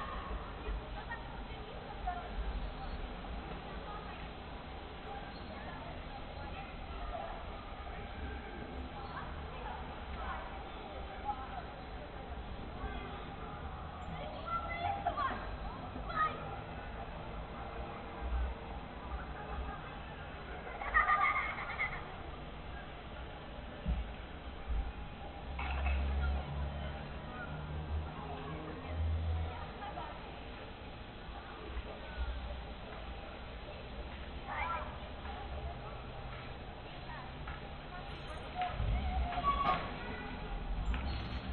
вулиця Незалежності, Костянтинівка, Донецька область, Украина - Дети на улице и звуки автомобиля
Дети играют на улице, мимо проезжает автомобиль
Звук: Boya by-pvm 1000l
Donetska oblast, Ukraine